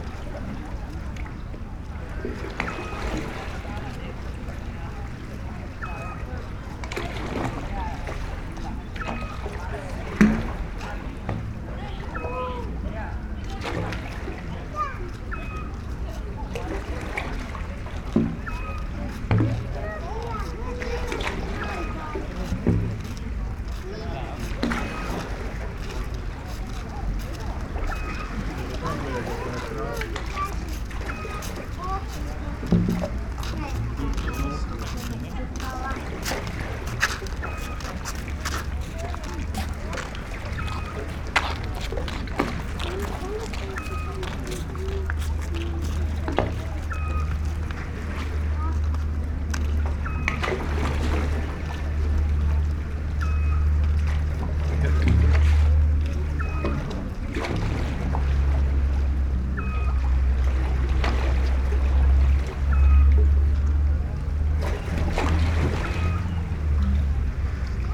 Novigrad, Croatia - three round and two square holes
sounds of sea with evening city hum, steps, small owl, seagulls ... at the edge of small concrete pool
13 July 2013, 22:00